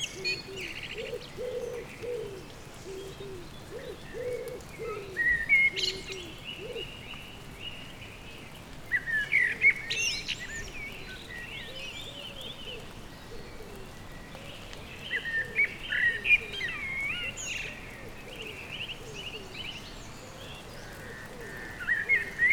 Dartington, Devon, UK - soundcamp2015dartington blackbird in gardens in rain
2 May 2015, 18:31